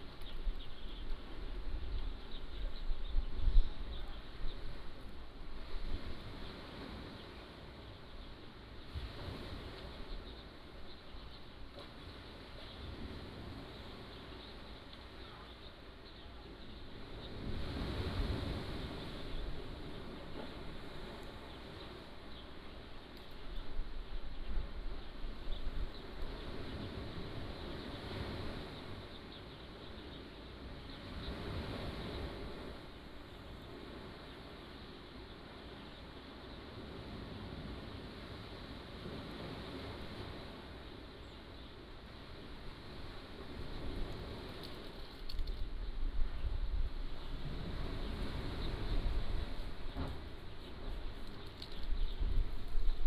津沙港, Nangan Township - In the small port
Aboard yacht, Sound of the waves
福建省 (Fujian), Mainland - Taiwan Border, October 2014